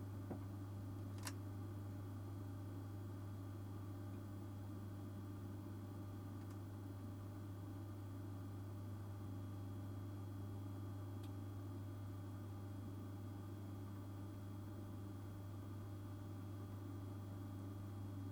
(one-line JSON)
{"title": "Istanbul - Berlin: Relocomotivication in Oradea, Romania", "date": "2010-11-29 03:09:00", "description": "Sleeplessness witnesses the next relocomotivication. The romanian locomotive is being detached from the train. Although, this is just a guess, brought about by very indistinct vibrations going through the trains body in the very night.", "latitude": "47.07", "longitude": "21.93", "altitude": "138", "timezone": "Europe/Bucharest"}